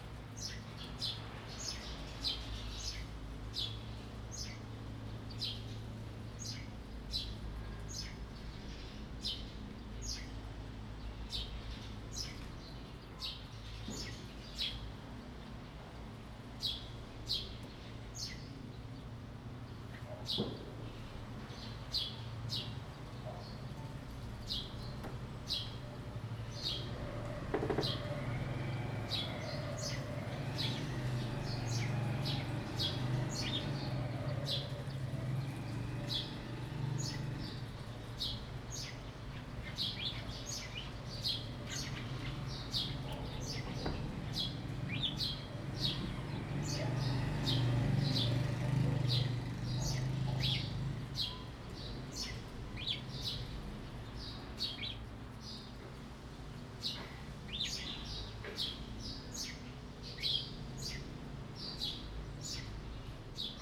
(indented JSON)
{
  "title": "安岐, Jinning Township - Small villages",
  "date": "2014-11-03 09:06:00",
  "description": "Birds singing, Wind, Small villages\nZoom H2n MS+XY",
  "latitude": "24.46",
  "longitude": "118.33",
  "altitude": "17",
  "timezone": "Asia/Taipei"
}